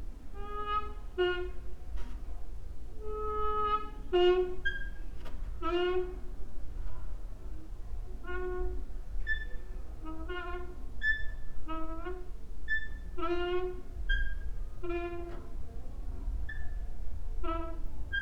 ... with drops into porcelain bowl
Maribor, Slovenia, 22 October 2013